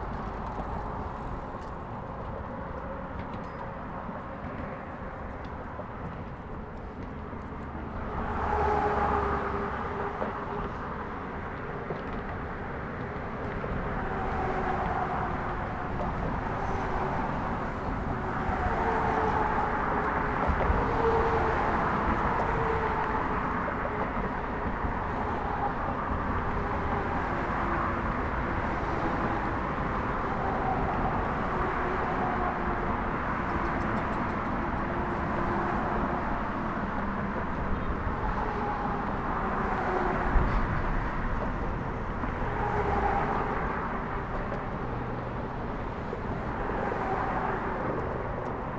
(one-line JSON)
{"title": "Pulaski Bridge, Long Island City, NY, Verenigde Staten - Bridge traffic", "date": "2019-11-04 16:33:00", "description": "Zoom H4n Pro", "latitude": "40.74", "longitude": "-73.95", "altitude": "4", "timezone": "America/New_York"}